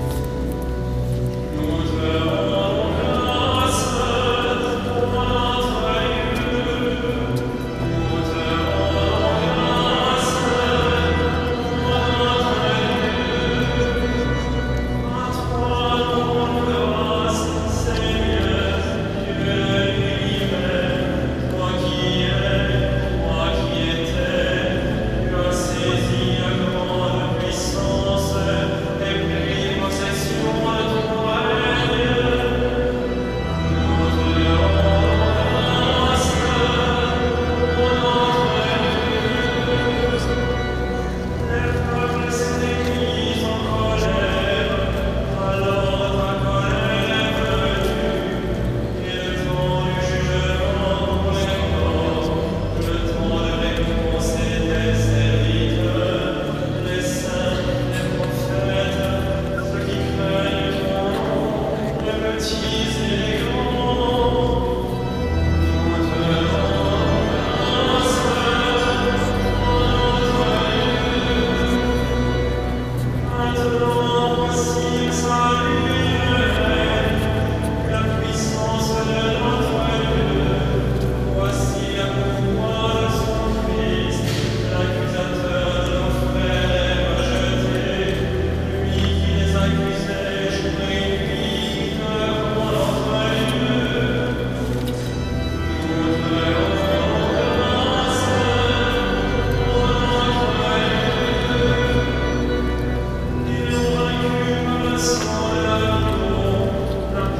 Fragment of a mass in de Cathédrale de Notre Dame (1). Binaural recording.
Paris, France